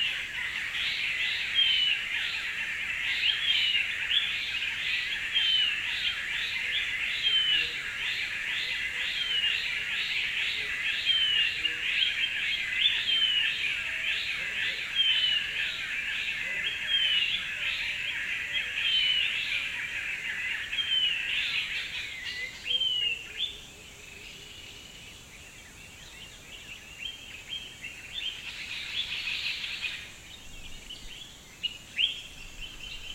Unnamed Road, Gambia - Mara Kissa dawn
Dawn in Mara Kissa near river, during mango season.
Brikama, West Coast, The Gambia, 20 June 2004, 06:05